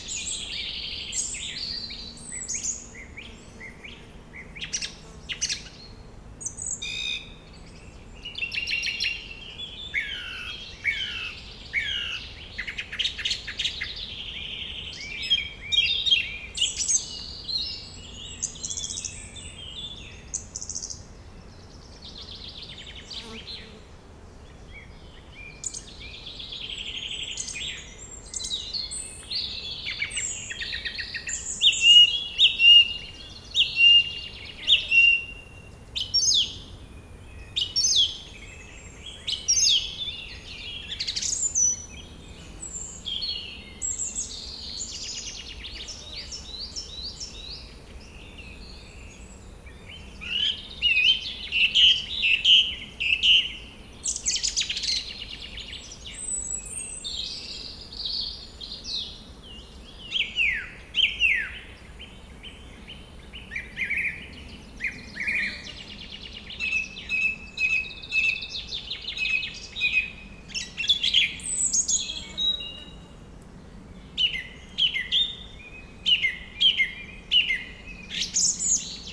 Forêt de Corsuet, France - Grive musicienne
Une grive musicienne entourée d'un rouge gorge et autres oiseaux, entre deux passages d'avions!
France métropolitaine, France, 11 June 2022, 18:30